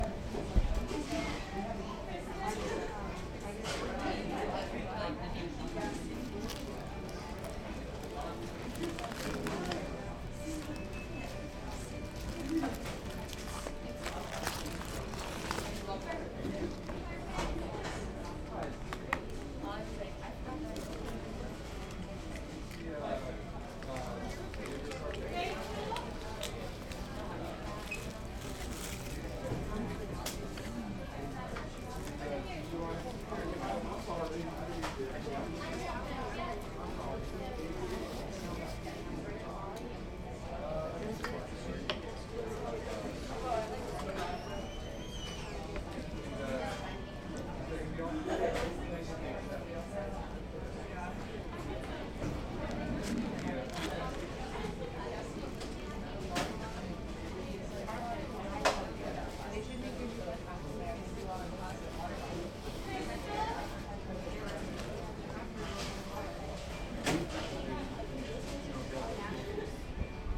Chick-fil-a in Boone, North Carolina